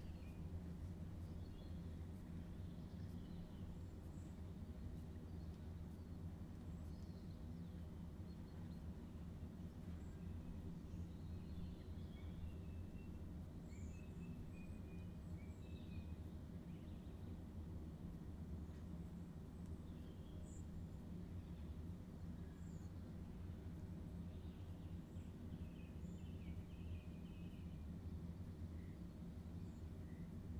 Bird Table, Cloughinnea Road, Forkhill, South Armagh. World Listening Day. WLD